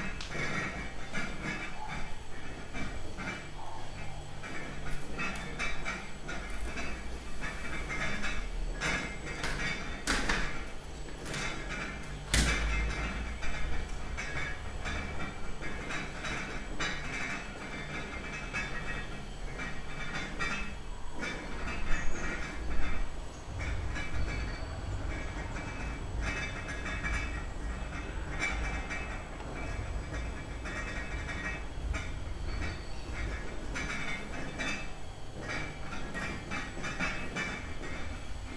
from/behind window, Mladinska, Maribor, Slovenia - lentil soup
16 October 2014